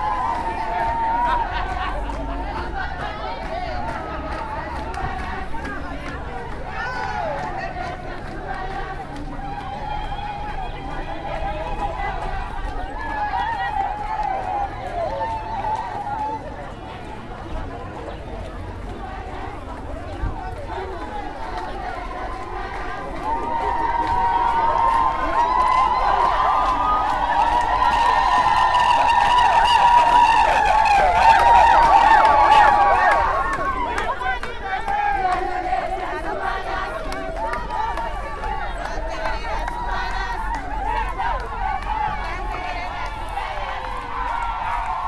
Urban Centre, Binga, Zimbabwe - Women's March to Freedom Square
recordings from the first public celebration of International Women’s Day at Binga’s urban centre convened by the Ministry of Women Affairs Zimbabwe
29 April 2016, 10:36